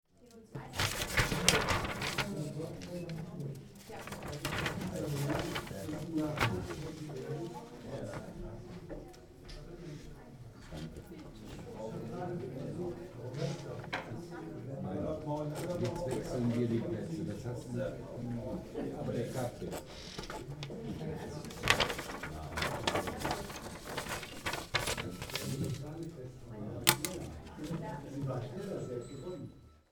köln, antwerpener str. - wahl / electing
making the right choice...
27 September 2009, ~14:00